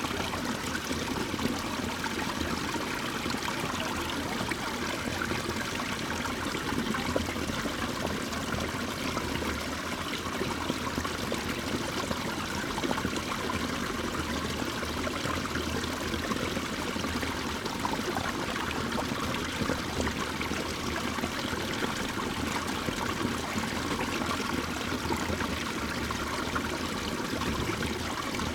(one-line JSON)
{"title": "göhren, strandpromenade: wasserbecken - the city, the country & me: water basin, trick fountains", "date": "2010-10-02 15:29:00", "description": "drain of a water basin\nthe city, the country & me: october 2, 2010", "latitude": "54.35", "longitude": "13.74", "altitude": "2", "timezone": "Europe/Berlin"}